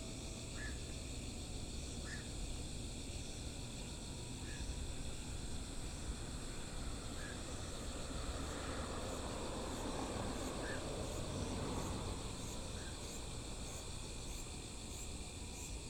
五福橋, 五結鄉利澤村 - Night of farmland
Night of farmland, Traffic Sound, Birds, Frogs
Zoom H6 MS+ Rode NT4